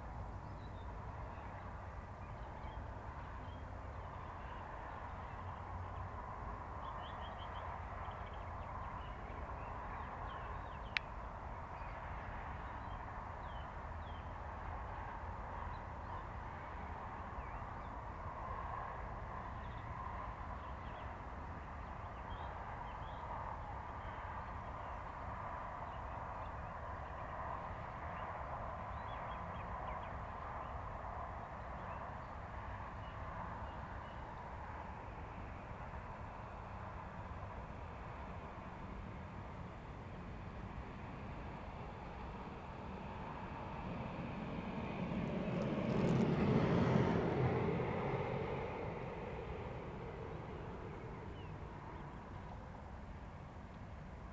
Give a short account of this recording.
Summer evening in a park. Joggers and roller skaters, crickets and birds, cars in the background. Zoom H2n, 2CH, handheld.